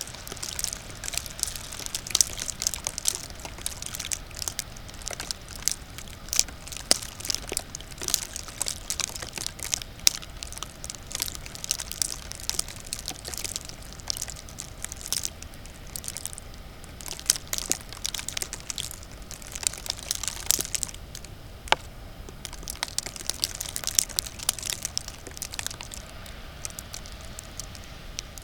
equipment used: Olympus LS-10 w/ contact mic and aluminum foil
A recording of a wet snow falling on a second storey balcony.